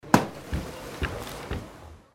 langenfeld, wasserskianlage - langenfeld, wasserski, einsprung
automtische wasserskianlage, nachmittags
hier: einsprung der ski ins wasser
soundmap nrw - sound in public spaces - in & outdoor nearfield recordings